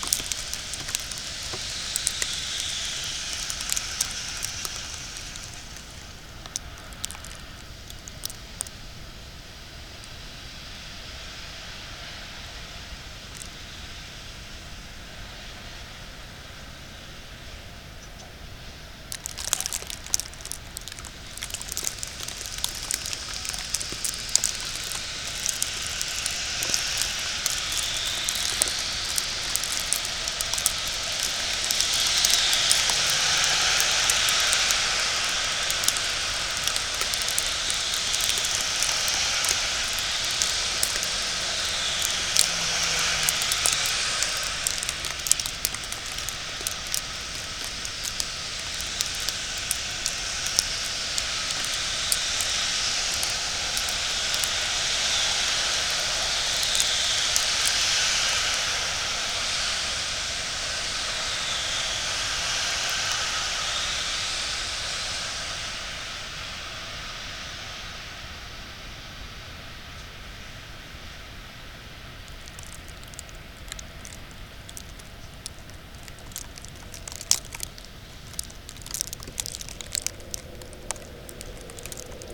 equipment used: Olympus LS-10 w/ contact mic and aluminum foil
A recording of a wet snow falling on a second storey balcony.